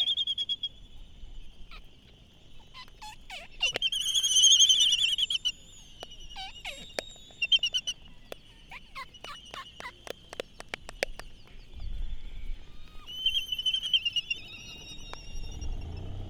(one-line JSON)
{"title": "United States Minor Outlying Islands - Laysan albatross dancing ...", "date": "2012-03-16 18:50:00", "description": "Laysan albatross dancing ... Sand Island ... Midway Atoll ... bird calls ... Laysan albatross ... red-tailed tropic birds ... open lavalier mics on mini tripod ... background noise ... some windblast ... traffic ... voices ...", "latitude": "28.22", "longitude": "-177.38", "altitude": "9", "timezone": "GMT+1"}